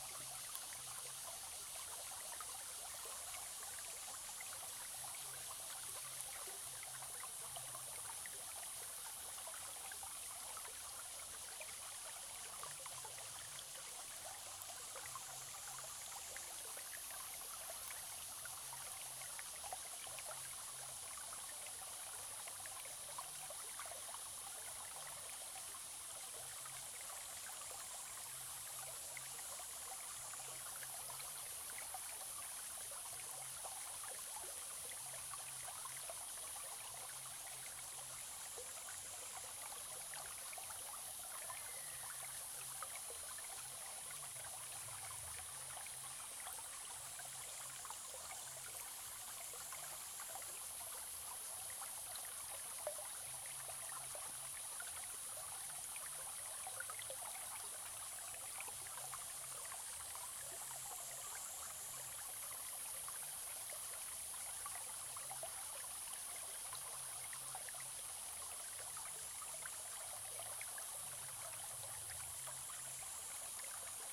Zhonggua River, 成功里 Puli Township - Sound of water
Small streams, In the middle of a small stream, Flow sound
Zoom H2n MS+ XY+Spatial audio
Nantou County, Taiwan, 14 July 2016